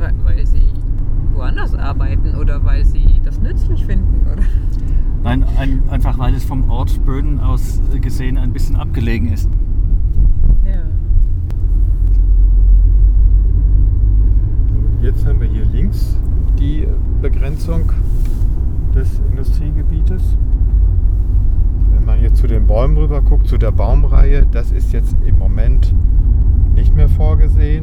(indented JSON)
{
  "title": "Weetfeld, Hamm, Germany - Visible and invisible borders...",
  "date": "2014-11-28 17:42:00",
  "description": "Driving a dirt road along the motorway, which so Rudi and Stefan cuts the shallow valley of Weetfeld two parts; two different communal areas and developments. The residents on the Bönen side of the motorway have not been very active against industrial developments on their side…\nWir fahren entlang der Autobahn auf einer unbefässtigten Strasse…\n“Citizen Association Against the Destruction of the Weetfeld Environment”\n(Bürgergemeinschaft gegen die Zerstörung der Weetfelder Landschaft)",
  "latitude": "51.62",
  "longitude": "7.80",
  "altitude": "69",
  "timezone": "Europe/Berlin"
}